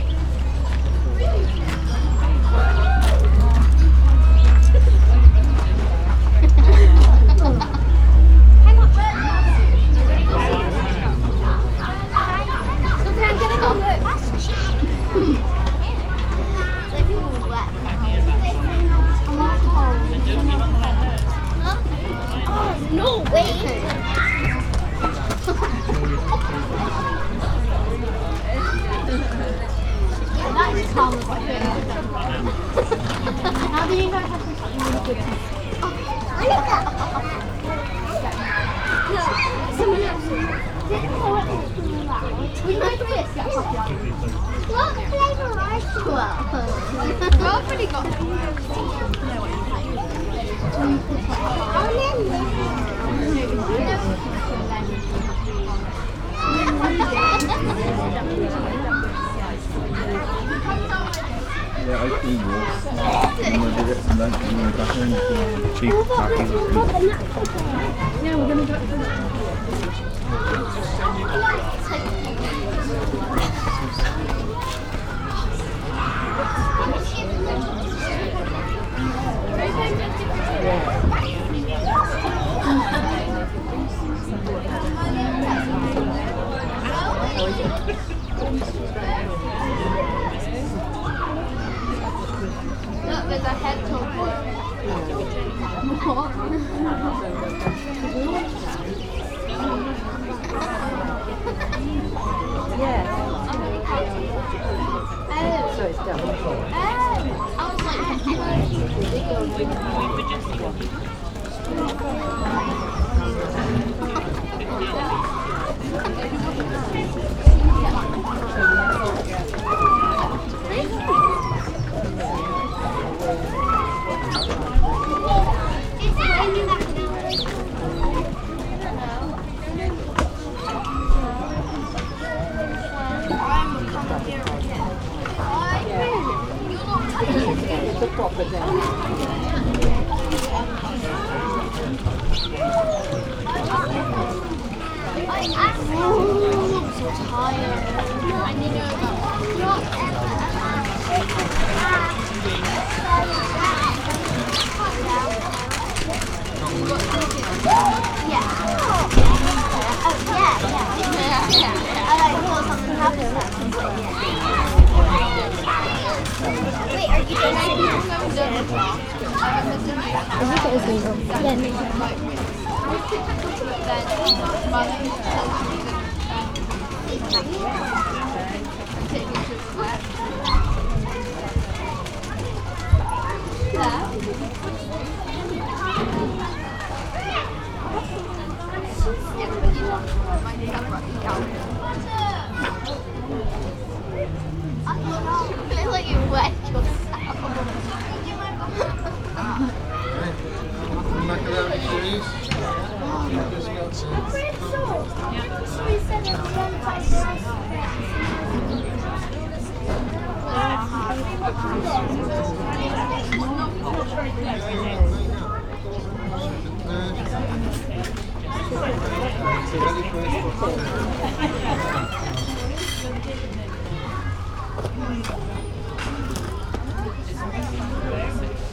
Outdoor Cafe, Croft Castle, Leominster, UK - Cafe
Voices in the courtyard near the outdoor cafe at this National Trust property in the Herefordshire countryside. MixPre 3 with 2 x Rode NT5s.
14 May 2019, ~13:00